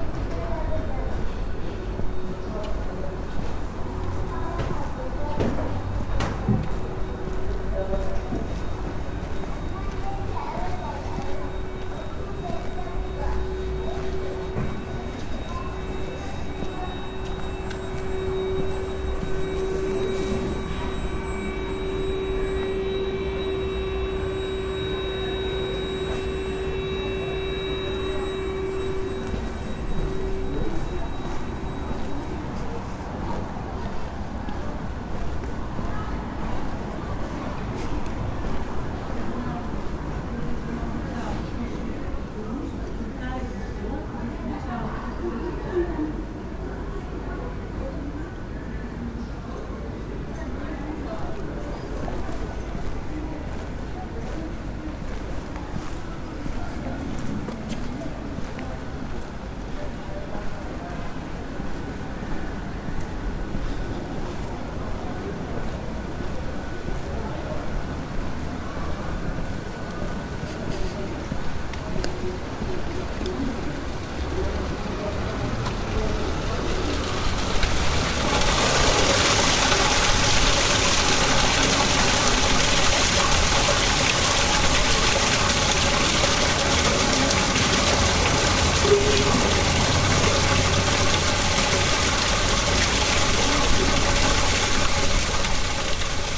The noise cannot be everywhere at the same time. At night streets can become surprisingly quiet. That can give you the chance to filter out some particular details: you hear the steps of a woman coming home, kids that are still awake, somebody vacuuming the living room and drainage water in the sewers.